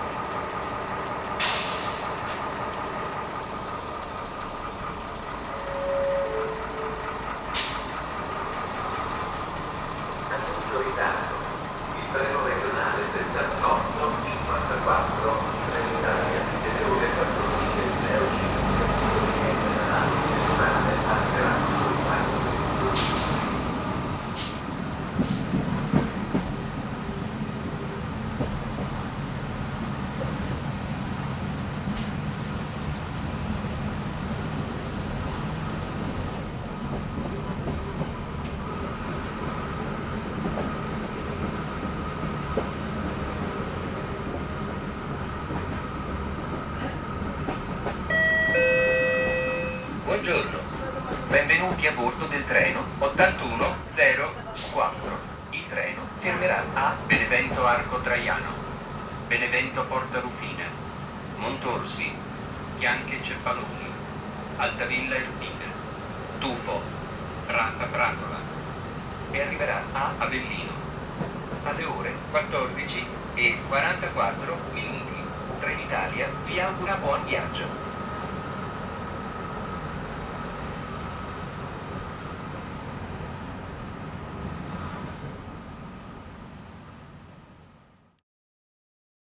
Benevento, Italy - train announcement
The recording was made on the train between Benevento and Avelino, a rail line that was shut down in October 2012.